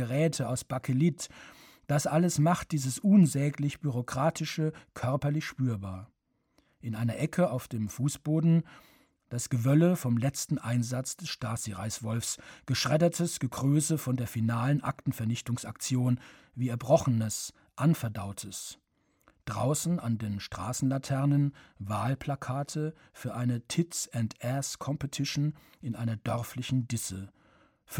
Produktion: Deutschlandradio Kultur/Norddeutscher Rundfunk 2009
Teistungen, Germany, 8 August 2009